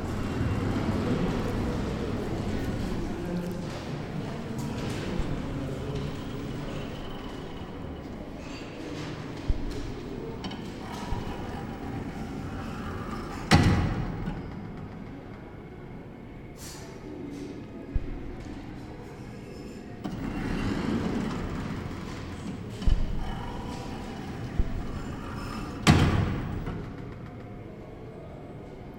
Prague, Czech Republic - Lucerna Passage
sound situation inside the Lucerna Palace passage system